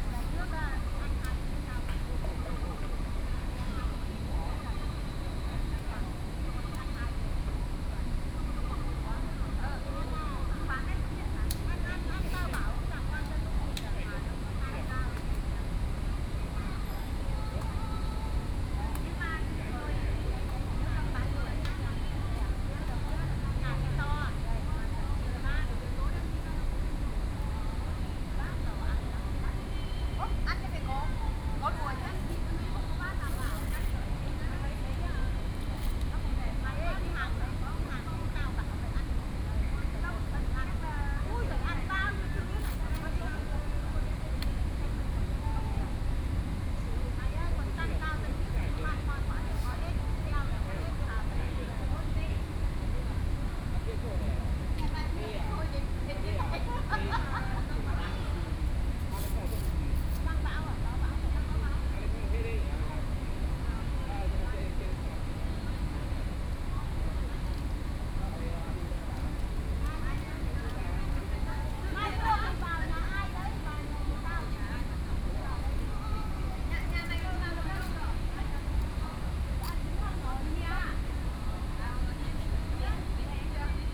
Taipei Botanical Garden - Chat
Group chat between foreign caregivers, Sony Pcm D50+ Soundman OKM II